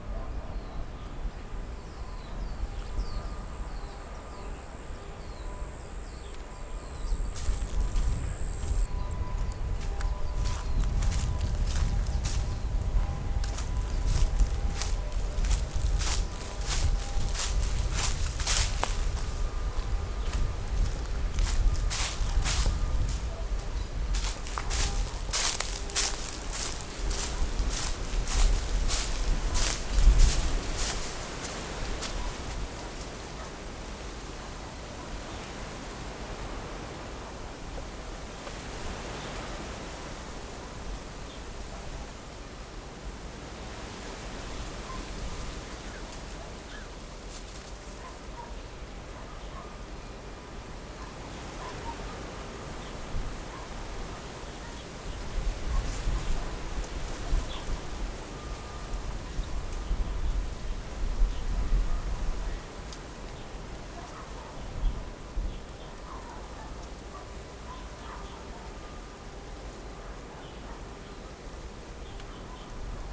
Houliao, Fangyuan Township - Under the tree
The sound of the wind, Foot with the sound of leaves, Dogs barking, Birdsong, Distant factory noise, Little Village, Zoom H6
Fangyuan Township, 寮北巷15號